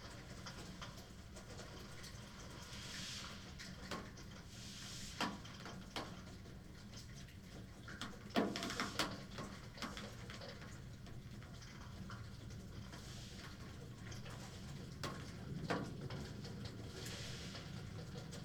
berlin, friedelstraße: backyard window - the city, the country & me: backyard window, snowmelt, water dropping on different window sills
snowmelt, water dropping on different window sills, recorder inside of a double window
the city, the country & me: february 3, 2010